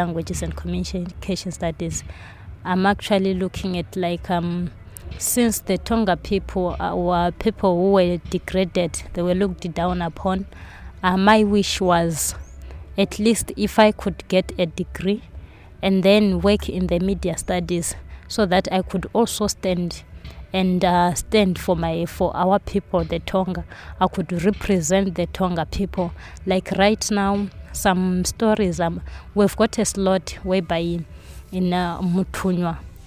Binga Craft Centre, Zimbabwe - Linda Mudimba – education for rural women like me…
We are sitting with Linda in front of the Binga Craft Centre. I caught up with her here after Linda had a long day of working on deadlines in Basilwizi’s office. We are facing the busiest spot in the district; the market, shops, bars and taxi rank paint a vivid ambient backing track… Linda tells about the challenges that education poses to people from the rural areas and to women in particular; as well as the added challenge young BaTonga are facing as members of a minority tribe in Zimbabwe…